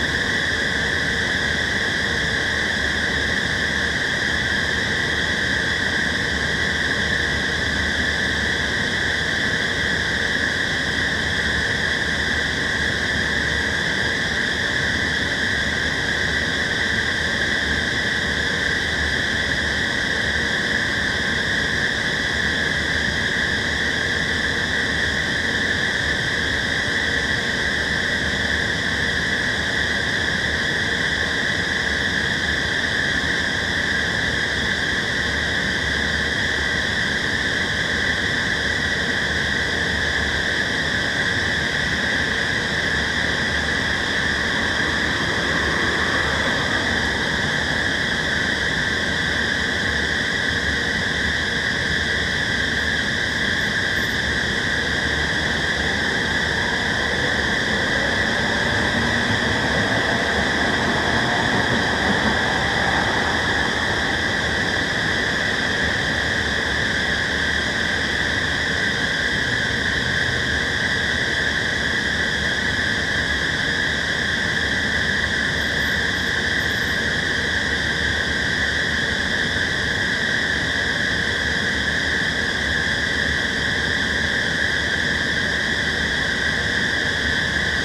Königsbrücker Str., Dresden, Deutschland - MDR Luefter5
5 screeching fans in front of the MDR radio & television
recording with Zoom H3 VR
Sachsen, Deutschland, 29 September 2020